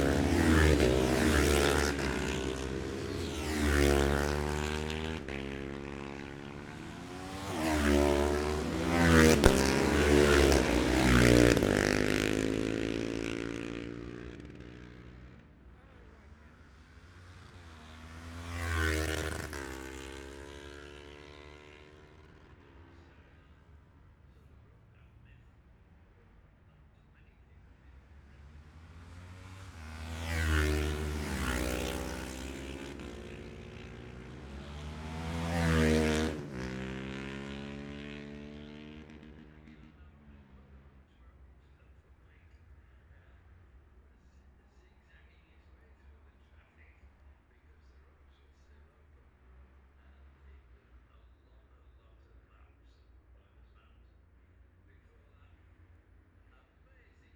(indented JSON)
{
  "title": "Jacksons Ln, Scarborough, UK - gold cup 2022 ... twins practice ...",
  "date": "2022-09-16 10:59:00",
  "description": "the steve henshaw gold cup 2022 ... twins practice ... dpa 4060s clipped to bag to zoom h5 ...",
  "latitude": "54.27",
  "longitude": "-0.41",
  "altitude": "144",
  "timezone": "Europe/London"
}